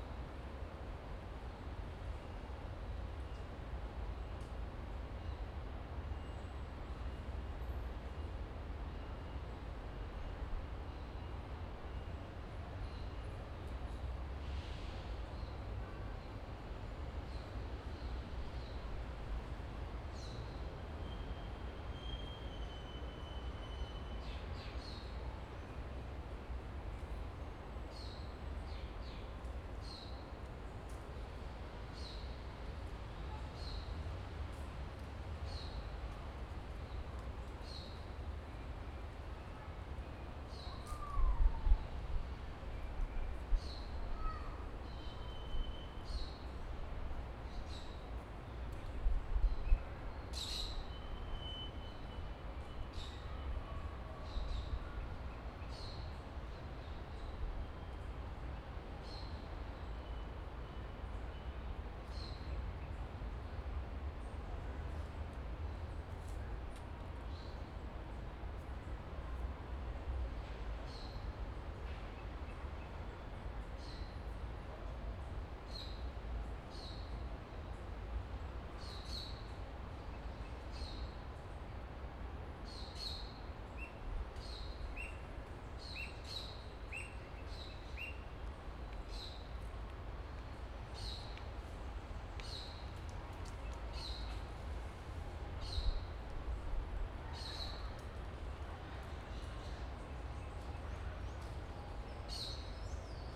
Parque - Tenente Siqueira Campos - Trianon - Rua Peixoto Gomide, 949 - Cerqueira César, São Paulo - SP, 01409-001, Brasil - Silencio e contraste
O local e uma passagem com pouco fluxo de pessoas e bastante arvores, foi utilizado um gravador tascam dr-40 fixado em um tripé.
- Cerqueira César, São Paulo - SP, Brazil